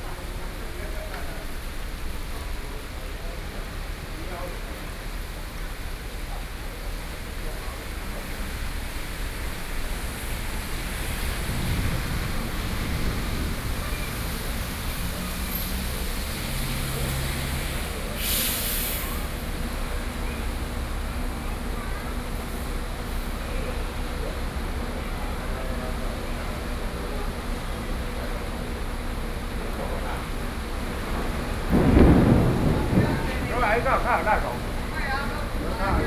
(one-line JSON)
{"title": "Zhongshan District, Taipei - Thunderstorm", "date": "2013-07-06 14:37:00", "description": "In front of the entrance convenience stores, Sony PCM D50 + Soundman OKM II", "latitude": "25.07", "longitude": "121.53", "altitude": "13", "timezone": "Asia/Taipei"}